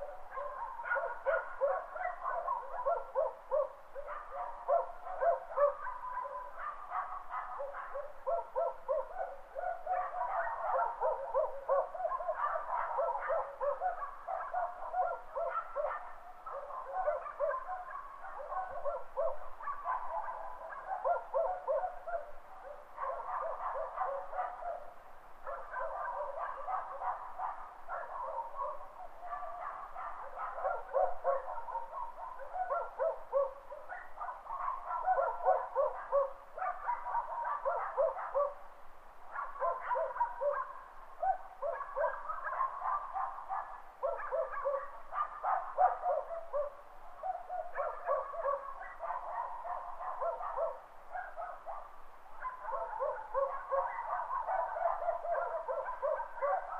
Largu, 01.Nov.2008 - 10:00pm
Romania